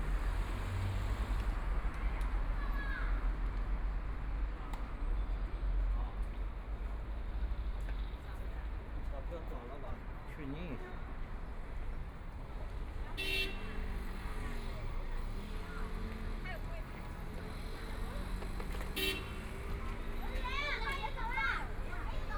South Station Road, Shanghai - on the road
Traffic Sound, Line through a variety of shops, Binaural recording, Zoom H6+ Soundman OKM II
Shanghai, China, 26 November 2013